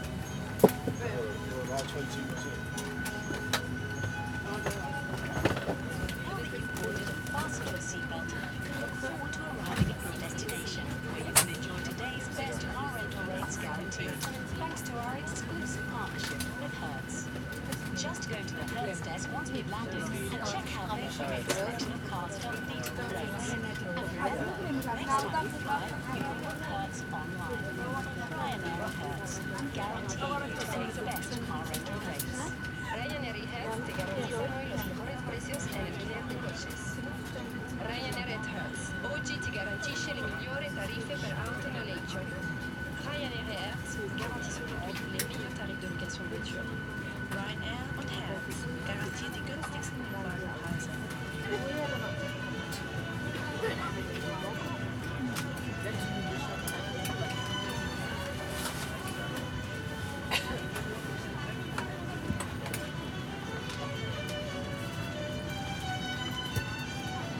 Porot, Francisco de Sá Carneiro Airport, at the runway - boarding of ryanair flight to Lübeck
already sitting on my seat. two streams of passengers forcing their way to their desired seats from both directions. sounds of shoes shuffling and elbow scuffles. radio announcements, audio adds, jingles, classical music. jet engines idling outside.